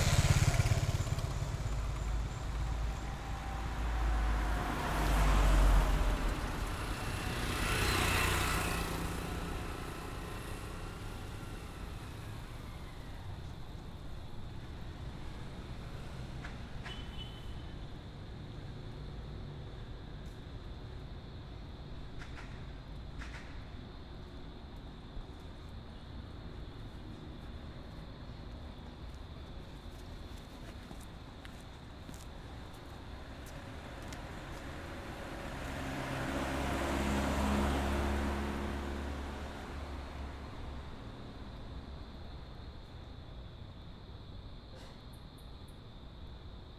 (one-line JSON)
{"title": "Cl., Medellín, El Poblado, Medellín, Antioquia, Colombia - Penúltima curva de la loma", "date": "2022-09-12 18:35:00", "description": "Se aprecia uno de los lugares más tranquilos de la loma en horas de la noche", "latitude": "6.22", "longitude": "-75.57", "altitude": "1572", "timezone": "America/Bogota"}